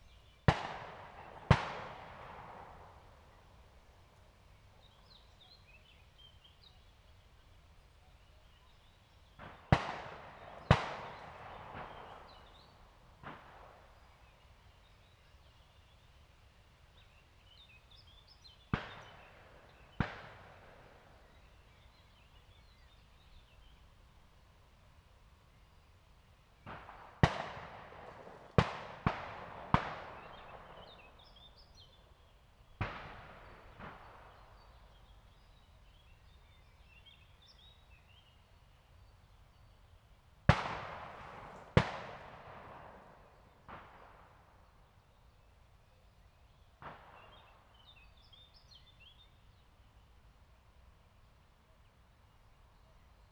aleja Spacerowa, Siemianowice Śląskie, Polska - shooting range
close to the shooting range
(Sony PCM D50 DPA4060)